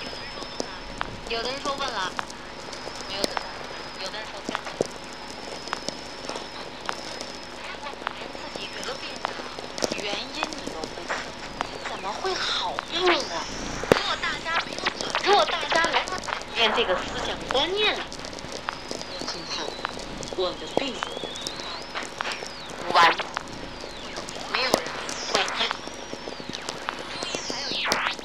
I.S.T/VLF and sw radio shooting star night in Fiac
field recording of Very low frequency mix with sw radio during the perseides night, the night of shooting star.
12 August 2009, 22:00